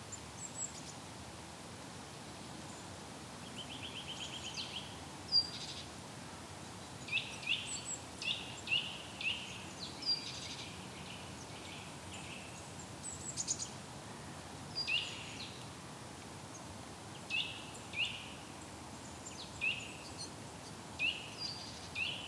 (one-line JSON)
{"title": "Rixensart, Belgique - Winter into the forest", "date": "2019-01-20 14:00:00", "description": "During the winter, there's very few sounds in the Belgian forest. Birds are dumb. Here, we can hear a brave Great tit, a courageous Common chaffinch and some distant clay pigeon shooting. Nothing else, it's noiseless, but spring is coming up.", "latitude": "50.72", "longitude": "4.54", "altitude": "74", "timezone": "Europe/Brussels"}